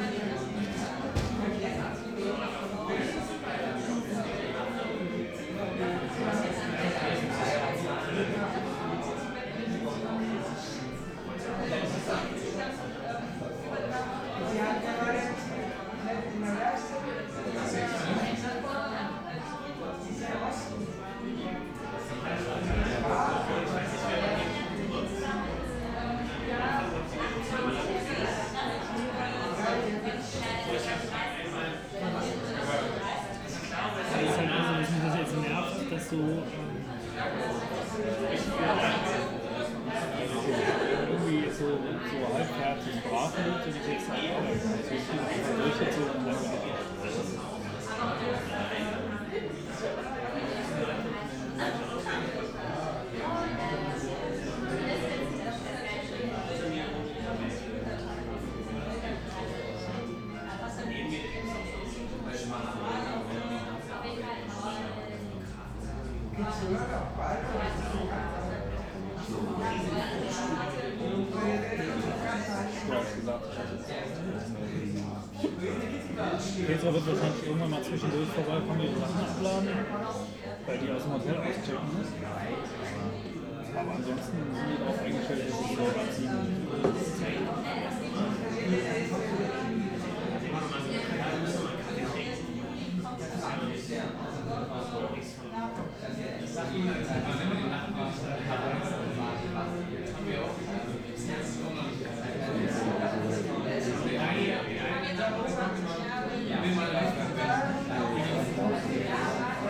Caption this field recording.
the city, the country & me: june 3, 2010